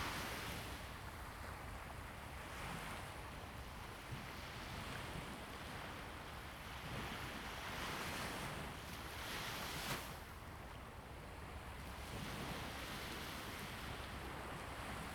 Huxi Township, Penghu County - Coast
Coast, Waves
Zoom H2n MS+XY
Husi Township, 202縣道, 21 October 2014, 11:05